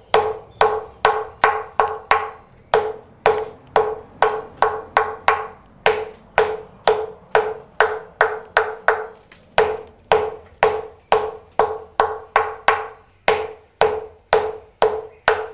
Savaii; Samoa, tapa making, recorded by VJ Rhaps